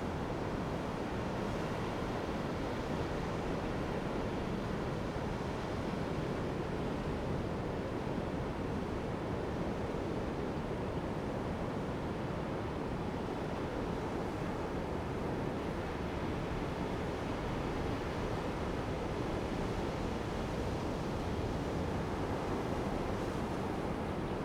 港仔, 海墘路 Manzhou Township - Behind the seawall
Behind the seawall, Bird call, Sound of the waves, Wind noise
Zoom H2n MS+XY